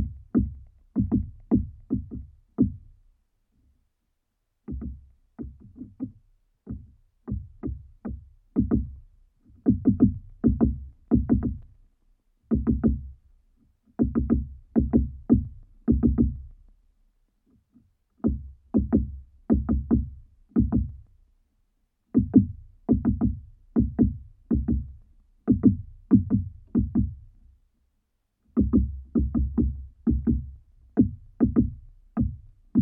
Utena, Lithuania, woodpecker
dead pine tree. woodpecker on the top and a pair of contact mics below